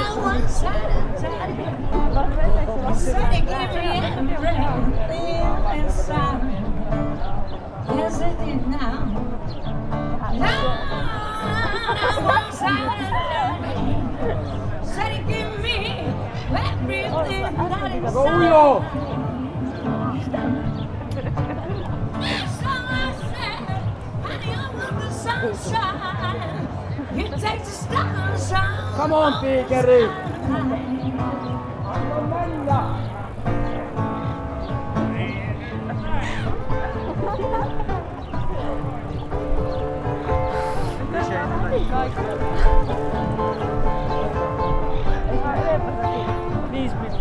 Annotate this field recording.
A woman plays a guitar and sings on a terrace full of people. People heckling at a drunk person trying to take a piss from the docks in front of hundreds of people, eventually applauding him for the effort. Zoom H5 with default X/Y module.